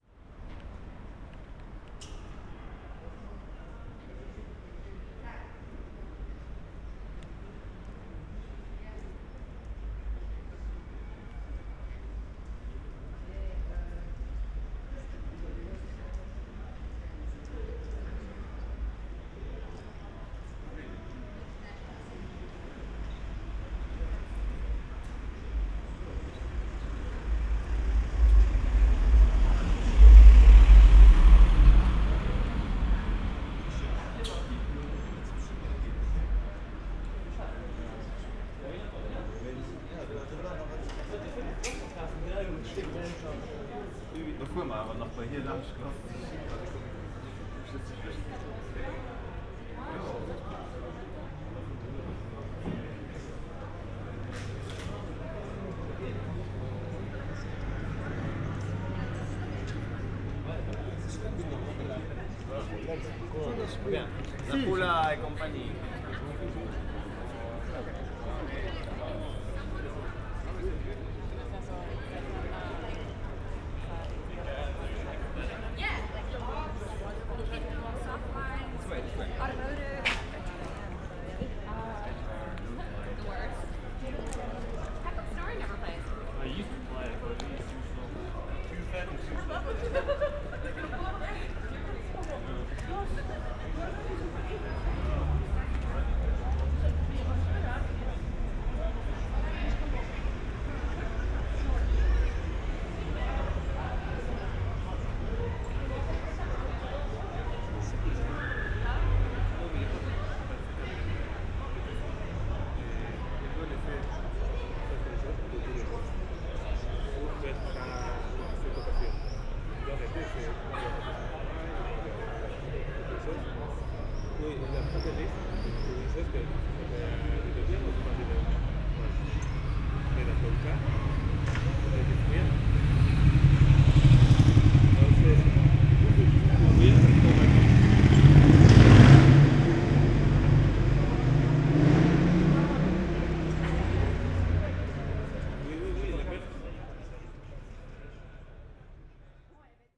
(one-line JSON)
{"title": "Rue Beaumont, Uewerstad, Luxembourg - short walk", "date": "2014-07-04 21:50:00", "description": "short walk in Rue Beaumont, location of the first radio broadcast station of Luxembourg, 1924\n(Olympus LS5, Primo EM172)", "latitude": "49.61", "longitude": "6.13", "altitude": "314", "timezone": "Europe/Luxembourg"}